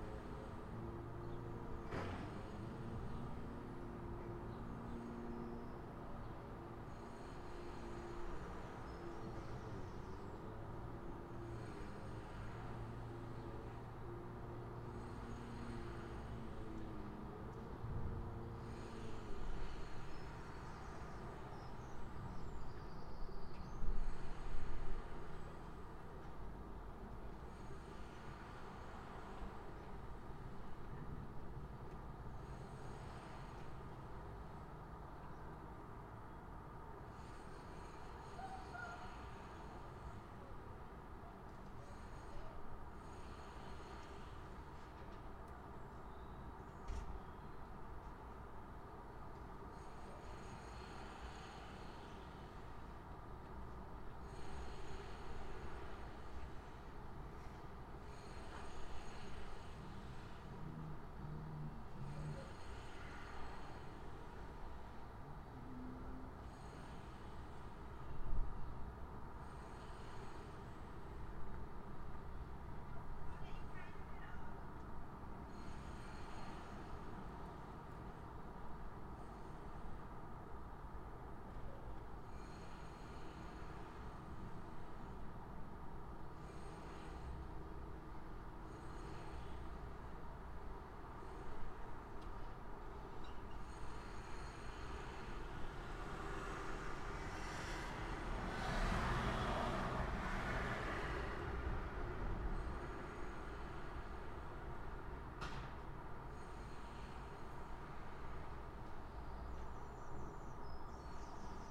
Heleny Junkiewicz, Warszawa, Poland - Targówek
East side of Warsaw. Recorded from the 10th floor flat.
soundDevices MixPre-6 + Audio Technica BP4025 stereo microphone.
16 April 2018